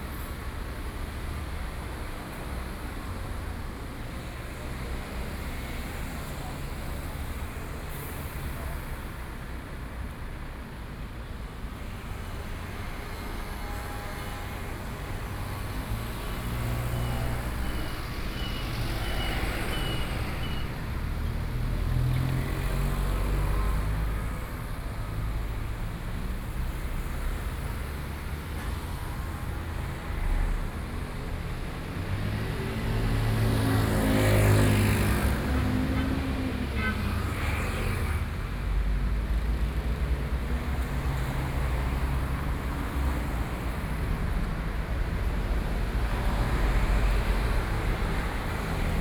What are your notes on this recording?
Night traffic, Sony PCM D50 + Soundman OKM II